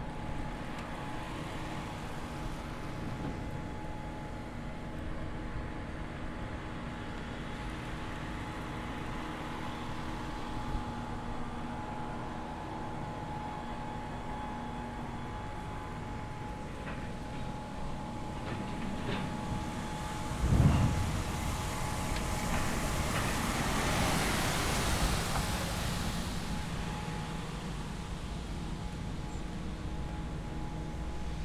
Wasted Sound
‘‘With the wasted sounds it is discussable if the sound is useful or not. It might in most cases not be useful. But what we have to consider is that the sound is often a side product of a very useful thing, which proves again that you have to have waste to be productive.’’